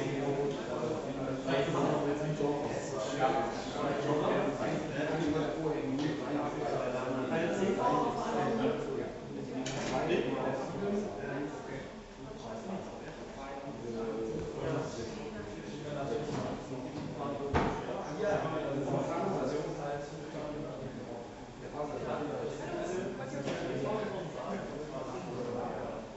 Weingarten, Deutschland - Waiting at the foyer
Waiting for an event to start, drinking a beer and watching the scene
glas, noise, speaking, people, waiting, background, talking